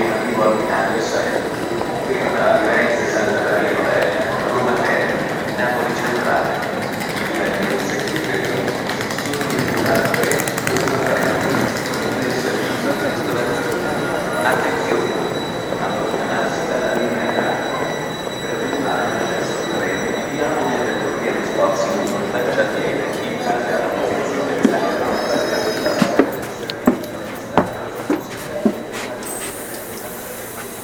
Central railway station, Bologna, Italy - train connections
A glimpse of modern life hurry: taking a train connection. Jumping off a train in arrival, moving faster trhough the crowd to reach the arriving connection and jumping on the next one in few minutes. A variety of loud sounds here.